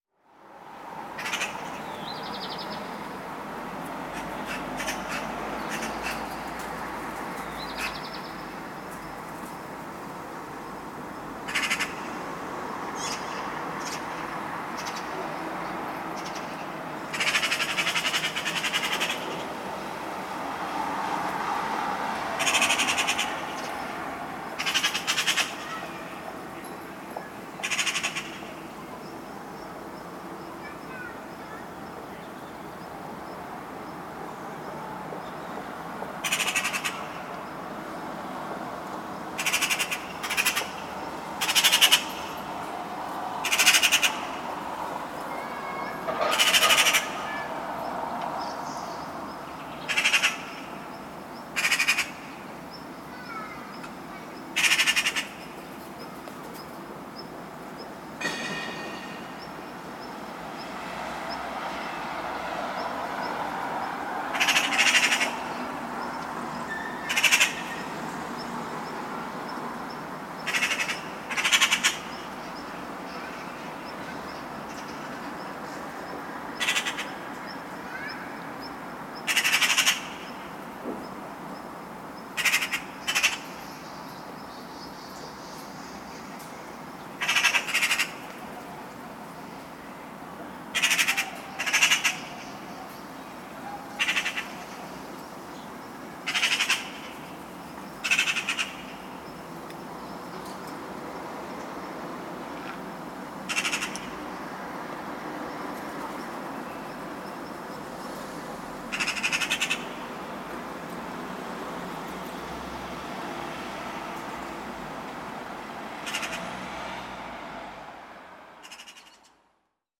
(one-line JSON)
{"title": "Oświecenia neighbourhood at dawn - Kraków, Polska - (168 BI) Eurasian Magpie", "date": "2017-06-23 16:48:00", "description": "Recording of a pretty loud Eurasian Magpie.\nRecorded with Soundman OKM on Sony PCM D100", "latitude": "50.09", "longitude": "19.99", "altitude": "253", "timezone": "Europe/Warsaw"}